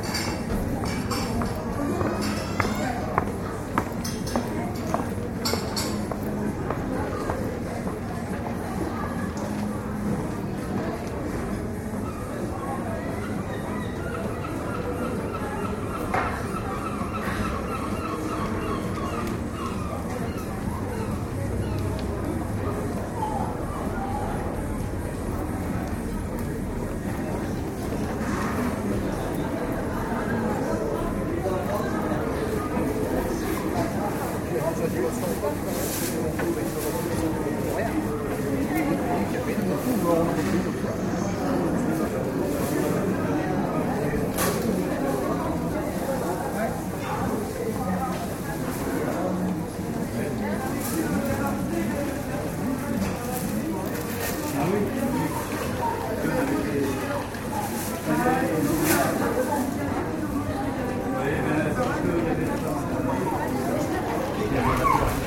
Rue de Lille
World listening day
Pedestrian street+restaurant at Lunch time